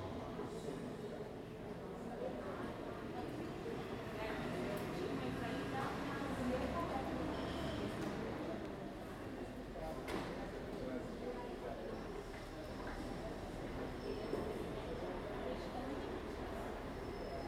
Av. Álvaro Ramos - Quarta Parada, São Paulo - SP, Brasil - hall Sesc
captação estéreo com microfones internos